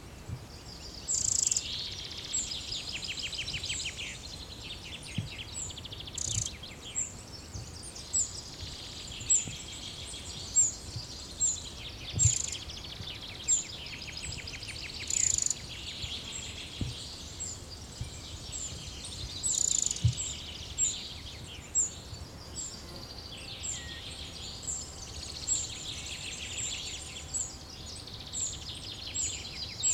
Palermo, Italia [hatoriyumi] - Uccelli e sterpaglie
Uccelli e sterpaglie